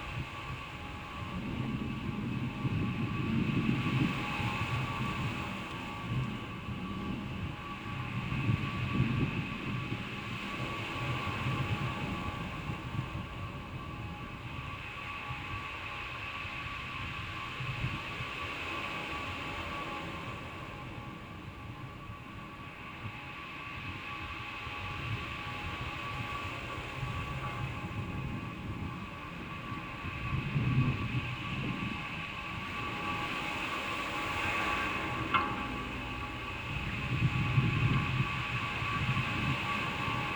Portbou, Girona, España - Olas por un tubo
Olas del mar y viento grabado por un micro de contacto.